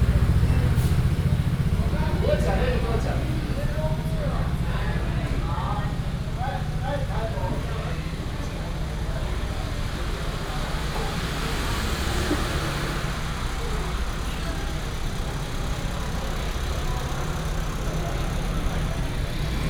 Walking through the market, motorcycle